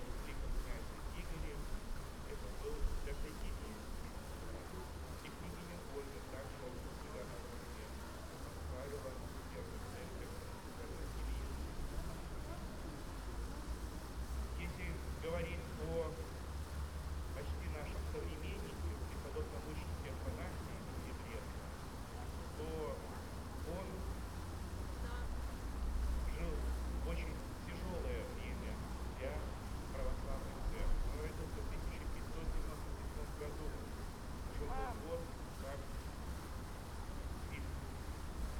Vierchni Horad, Minsk, Belarus, at fountain
The Place of Freedom, morning mass in the church and working fountaim
2 August 2015, 11:30